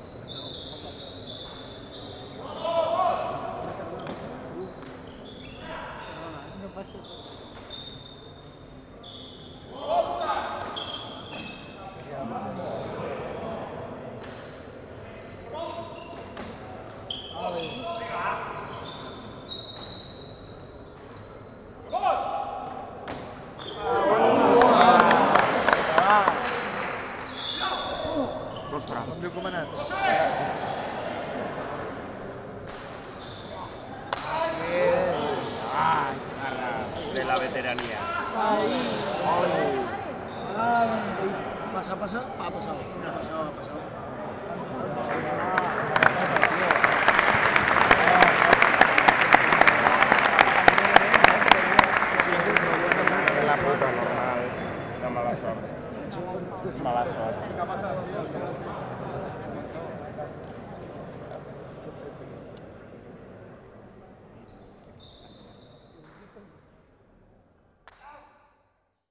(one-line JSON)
{"title": "Camí de Borriol a la Costa, s/n, Castellón, Espanya - Partida de pilota del Torneig de Magdalena 2018 al trinquet de Castelló", "date": "2019-03-26 18:28:00", "description": "Enregistrament binaural de la partida d'escala i corda del Trofeu Magdalena de pilota, celebrat al Trinquet Municipal de Castelló de la Plana. La parella formada per Soro III i Héctor van derrotar a Genovés II i Javi.", "latitude": "40.01", "longitude": "-0.04", "altitude": "29", "timezone": "Europe/Madrid"}